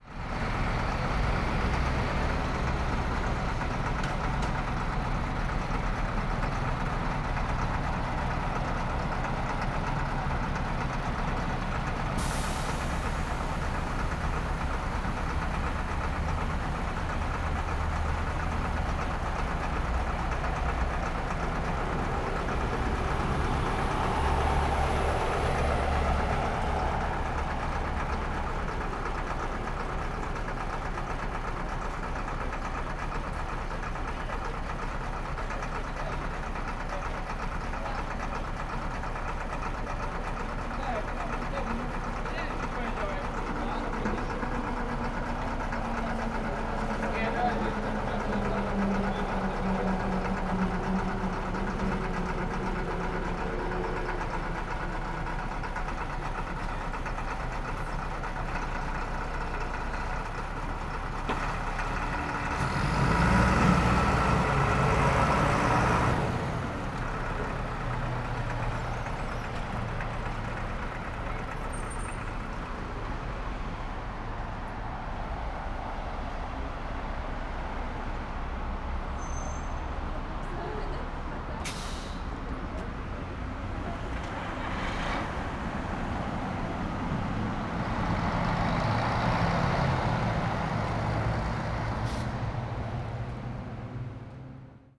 Poznan, Gorczyn, bus depot - bus idling and departing
throb of the bus engine, suspension hiss, trucks passing on the bridge above, sudden acceleration